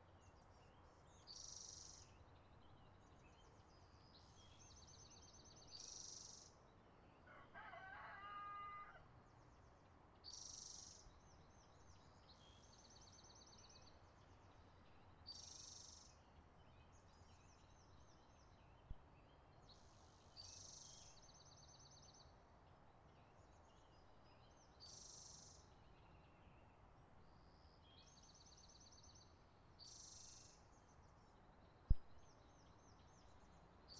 Mountain blvd. Oakland - MBLVD ambience
last of the recordings from Mountain blvd.